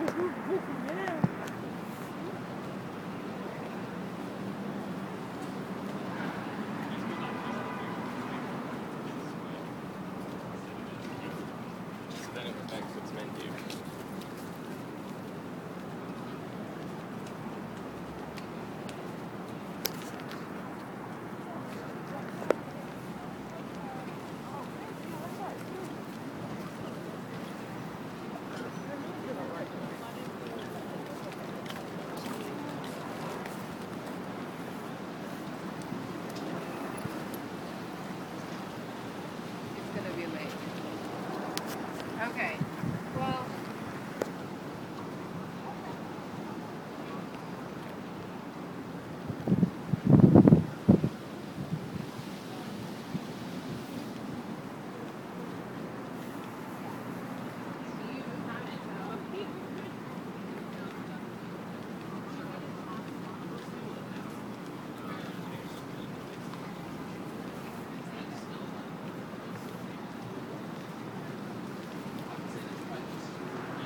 Chelsea, New York, NY, USA - Highline Walk
The High Line is a 1-mile (1.6 km) New York City linear park built on a 1.45-mile (2.33 km)section of the former elevated New York Central Railroad spur called the West Side Line, which runs along the lower west side of Manhattan; it has been redesigned and planted as an aerial greenway. The High Line Park currently runs from Gansevoort Street, three blocks below West 14th Street, in the Meatpacking District, up to 30th Street, through the neighborhood of Chelsea to the West Side Yard, near the Javits Convention Center.
I walked the extent of the Highline at different times of day, from South to North, recording the natural, human, and mechanical sounds that characterize this unique place.